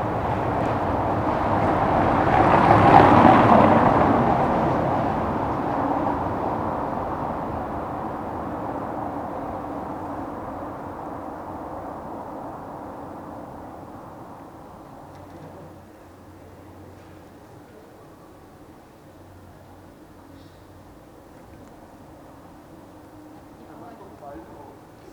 berlin: friedelstraße - the city, the country & me: night traffic
cyclists, passers by, taxis
the city, the country & me: october 27, 2012